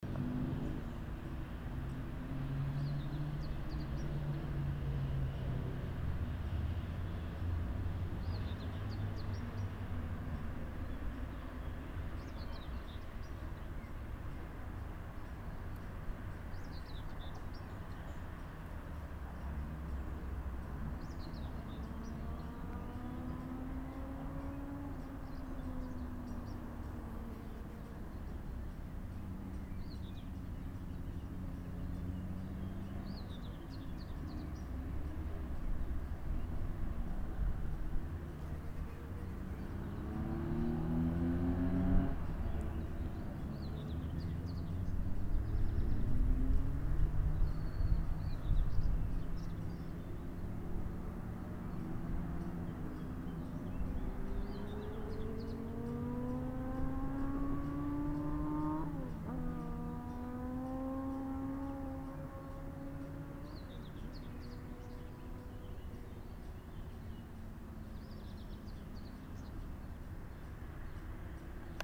bikers along mosel river - Alf, bikers along mosel river
recorded may 31, 2008 - project: "hasenbrot - a private sound diary"